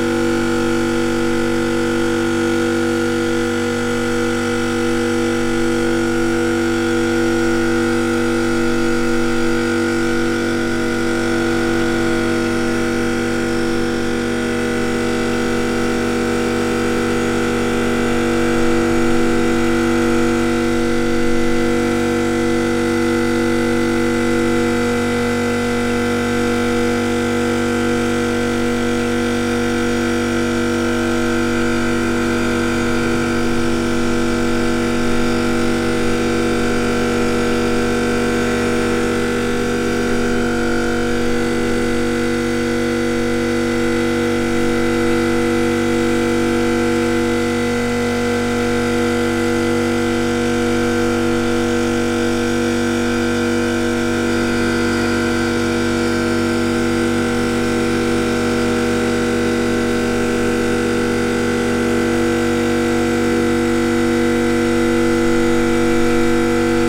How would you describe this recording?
Ventilation extractor subtly changing pitch in the breeze.